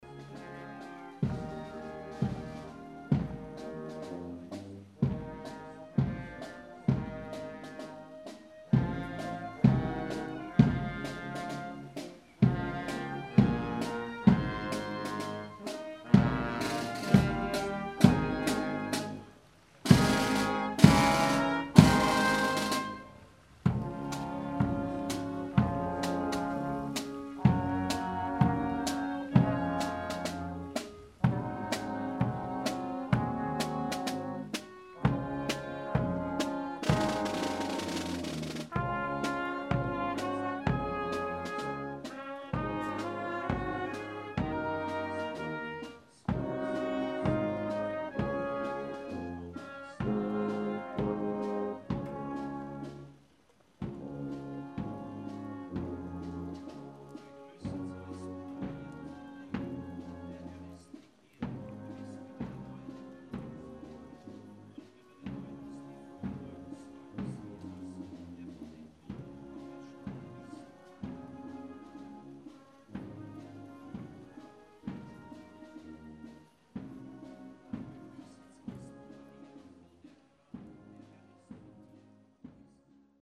Outside on the town streets - a music group - harmonie municipale - passing by at the Mother Gods Procession day.
Clervaux, Prozession
Draußen auf der Straße. Eine Musikgruppe - die Gemeindemusiker - laufen vorbei am Tag der Muttergottesprozession. Aufgenommen von Pierre Obertin im Mai 2011.
Clervaux, procession
Dehors dans les rues de la ville – un groupe de musiciens – la fanfare municipale – passe pendant la procession du jour de la Vierge. Enregistré par Pierre Obertin en mai 2011.
Project - Klangraum Our - topographic field recordings, sound objects and social ambiences
Clervaux, Luxembourg, 12 July 2011, 23:03